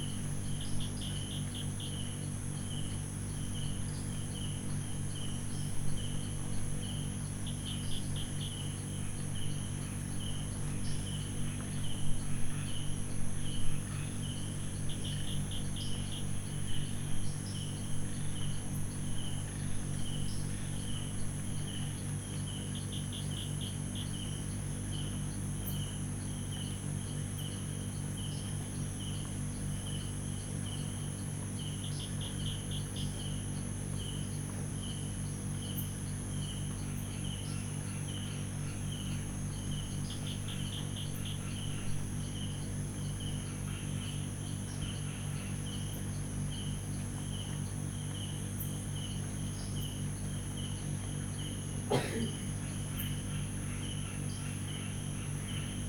Khrongkan Thanon Nai Mu Ban Mai Ngae Rd, Tambon Chong Kham, Amphoe Mueang Mae Hong Son, Chang Wat Ma - Atmo Resort Mae Hong So
Morning atmosphere in the woods near Mae Hong Son. Not much happening.